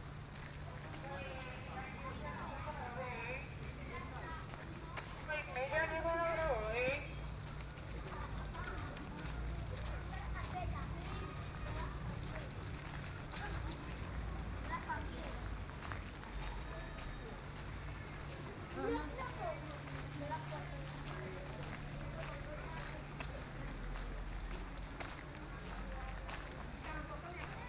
Chigorodó, Chigorodó, Antioquia, Colombia - Del hotel al colegio
A soundwalk from Eureka Hotel up to Laura Montoya school
The entire collection can be fin on this link